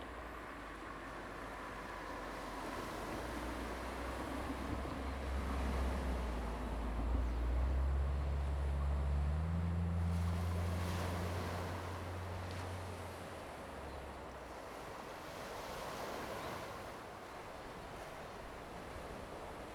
Imowzod, Ponso no Tao - Aircraft flying through
Aircraft flying through, Traffic Sound
Zoom H2n MS +XY